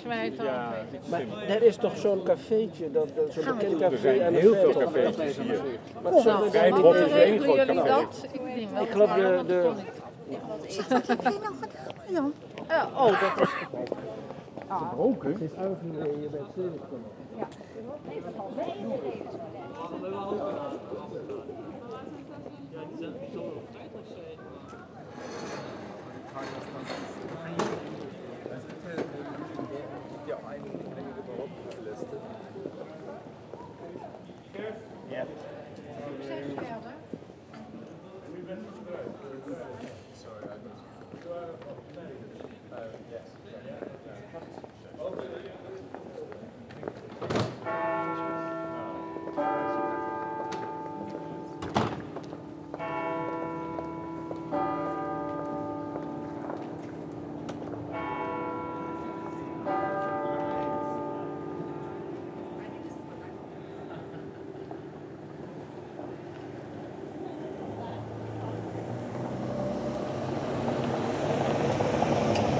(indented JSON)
{
  "title": "Maastricht, Pays-Bas - Uninvited to a wedding",
  "date": "2018-10-20 15:40:00",
  "description": "Het Vagevuur. After a wedding, a small group of elderly people discuss. They are stilted. The atmosphere is soothing and warm.",
  "latitude": "50.85",
  "longitude": "5.69",
  "altitude": "57",
  "timezone": "Europe/Amsterdam"
}